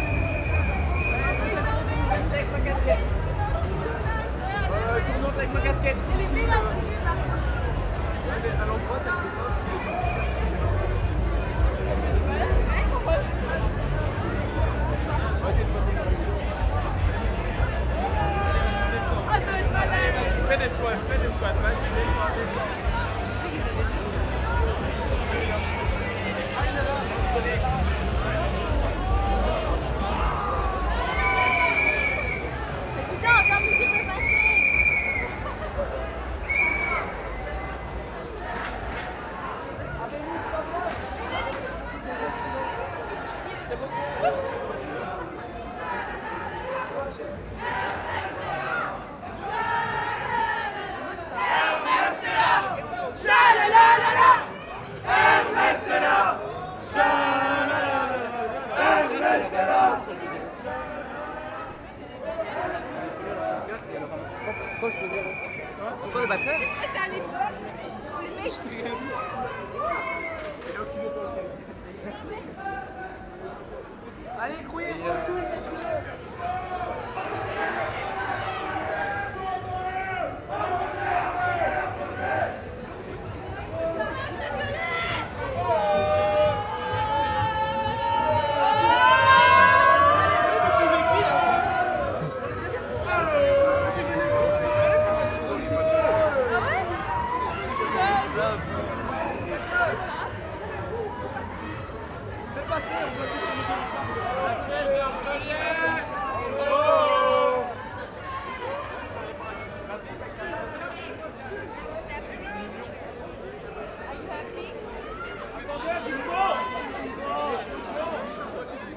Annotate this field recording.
24 heures vélos means, in french, 24 hours bicycles. Students are doing a big race, with traditional VTT running fast, folk and completely crazy bikes, running slow and bad and drunk, all running during 24 hours. Every whistle notice a bike incoming. Also, its a gigantic carousal. Every student is drunk. On evening it's happy people, shouting, pissing everywhere and vomiting also everywhere. Later on the night, more and more alcohol, it will be another story... But also this feast, it's bleusailles. It's a patois word meaning ... perhaps trial by fire, its hard to translate as it's a quite special belgian folk, with clothes and rules. 9:30 mn, it's baptized students walking, coming from Hermes school, shouting and ... singing ? I think they are completely drunk ! Recording while walking in the center of the city. It's all night shouting like this !